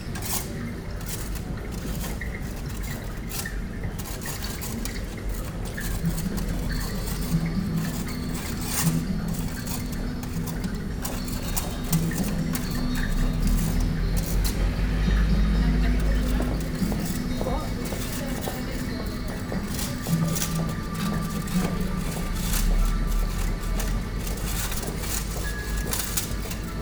Reading, Reading, Reading, UK - Oracle Water Mill
This recording is of the "dynamic sound" installation commissioned for the 10th Anniversary of the Oracle shopping centre in Reading, on the place where the 11th Century St Giles' Watermill once stood. As I walk northwards from inside the shopping centre and stand on the bridge over the brook where the sound installation is sited, synth pads, acoustic guitar riffs and flowing water sounds emerge to form a bed underpinning the sounds of shoppers and a man removing moss from a roof opposite. For me, the question this recording poses is whether reimaginings and reinterpretations of sounds past adds to the experience and understanding of the place? Recorded using a spaced pair of Naiant X-X microphones and a Tascam DR680MKII.